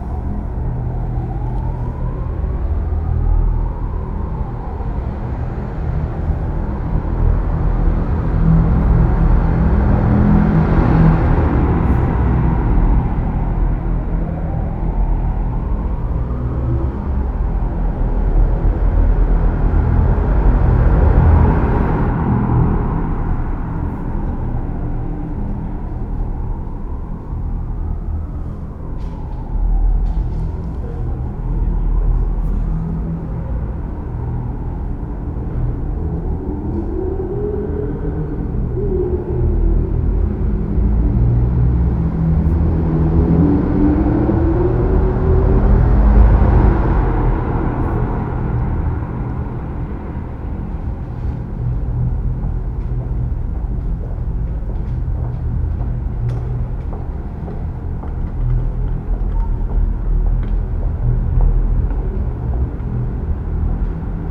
Brussels, Rue de Lausanne in a tube, kind of 20 cm diameter from a construction site.